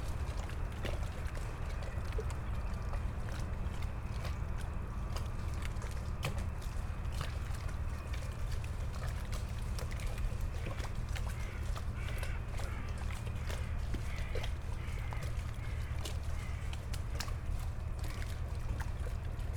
Berlin, Plänterwald, Spree - Spreepark soundscape, powerplant, ferris wheel
Saturday noon, the ice has gone quickly after a few mild days; waves of the Spree, always the sounds from the power station, after a minute the ferris wheel at the nearby abandonded funfair starts squeaking
(SD702, DPA4060)
Berlin, Germany